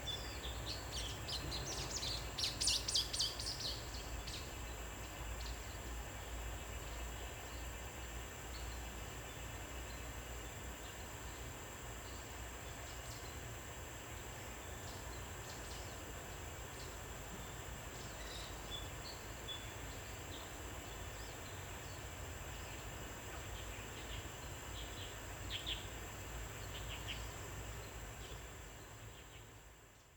Cicadas cry, Frogs chirping, Bird sounds
Zoom H2n MS+XY
Puli Township, 桃米巷16號